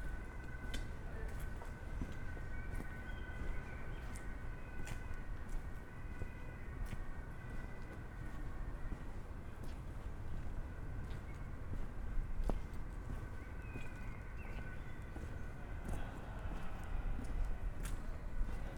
walk through the narrow streets around the Limburger Dom, 6pm churchbells from the cathedral and others
(Sony PCM D50, DPA4060)
Limburg, Germany, 13 July 2014